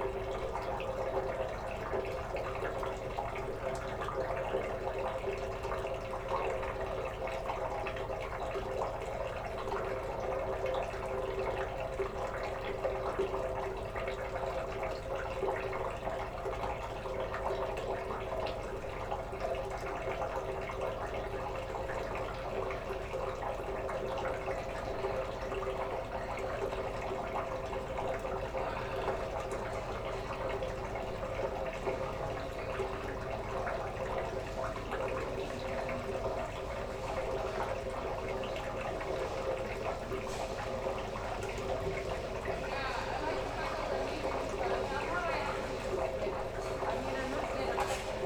berlin, pflügerstraße: gully - the city, the country & me: gully
the city, the country & me: august 20, 2010